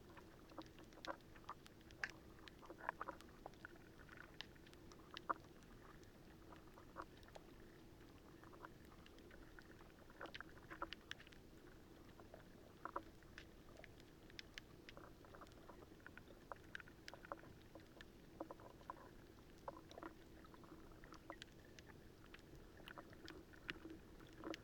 hydrophone in the calm waters of the marina
Platanias, Greece, 26 April 2019